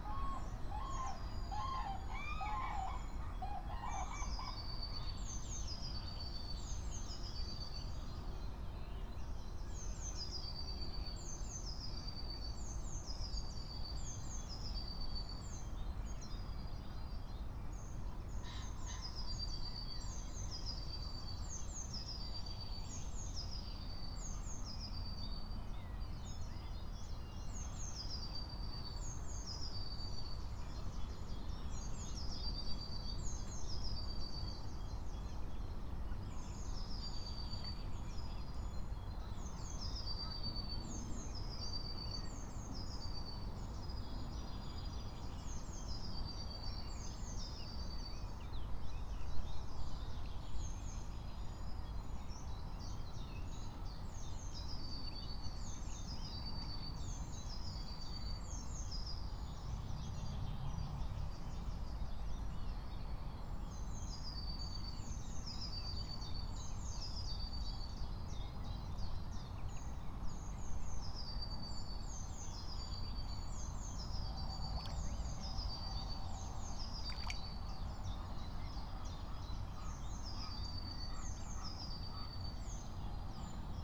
06:00 Berlin Buch, Lietzengraben - wetland ambience